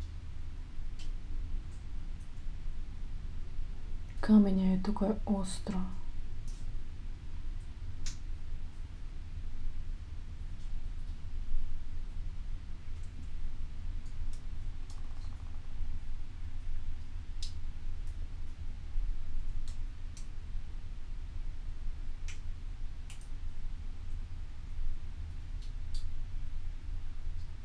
cave, Studenice, Slovenia - summer, words
Poljčane, Slovenia, 2 August 2015